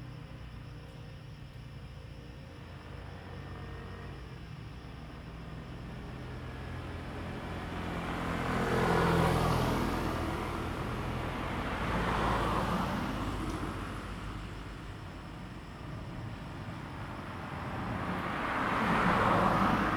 In the street, Traffic Sound, Cicadas sound
Zoom H2n MS+XY